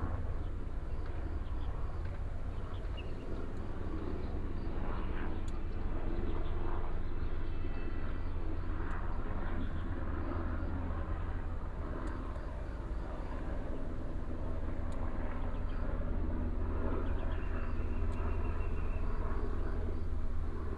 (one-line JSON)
{"title": "Dabu, Baozhong Township - Firecrackers and fireworks", "date": "2017-03-01 15:26:00", "description": "Firecrackers and fireworks, sound of birds, Helicopter, Traffic sound", "latitude": "23.70", "longitude": "120.32", "altitude": "13", "timezone": "Asia/Taipei"}